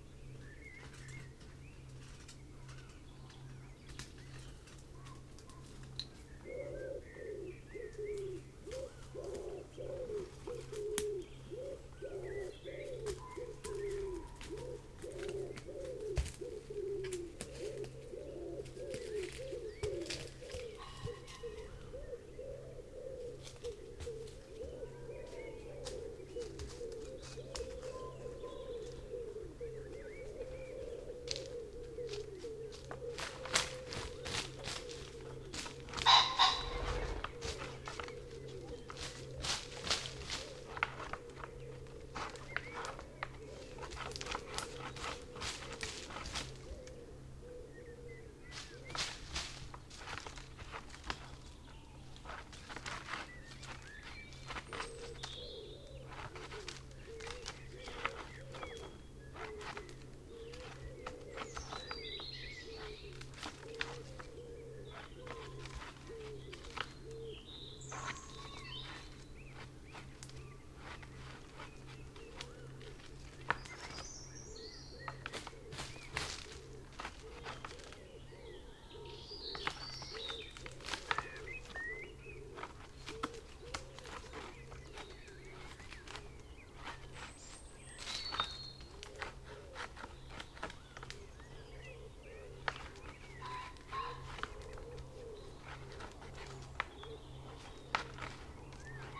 the wood wakes up ... pre-amped mics in SASS ... bird calls ... song ... from tawny owl ... pheasant ... wood pigeon ... red-legged partridge ... buzzard ... robin ... blackbird ... song thrush ... wren ... background noise and traffic ... something walks through at 17:00 ... could be roe deer ...